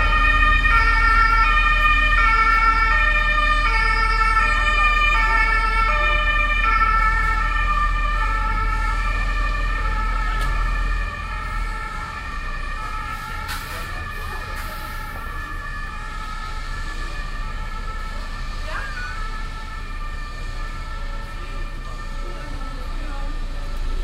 soundmap: cologne/ nrw
grossbaustelle chlodwigplatz nachmittags
project: social ambiences/ listen to the people - in & outdoor nearfield recordings